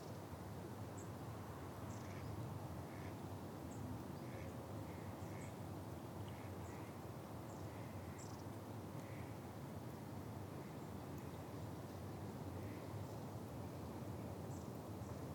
Whiteknights Lake, University of Reading, Reading, UK - Ducks, Swan, Geese and Aeroplane
There is an awful lot going on with the waterfowl of the lake this spring; in this recording you can hear ducks quacking and a very territorial swan grunting and hissing (he is waiting for the eggs of himself and his mate to hatch). Keen little gangs of male ducks can also be heard, their quacks are a bit raspier than the female's... and the huffing, gaspy noise is an Egyptian goose who is guarding two goslings and his female mate. Canada geese can be heard honking in the background. There are aeroplanes above, it is very rare to get any recordings in Reading without them, and a little wind because it was quite a windy day... but I'm hopeful you'll enjoy this sonic glimpse of the lake and its residents, who are all very busy making or waiting for babies. There is also a pheasant that honks part way through the recording, and you can hear the tiny little cheep-cheeps of the goslings, and the snipping sound of their parents' chewing the grass by the lake.
12 April 2017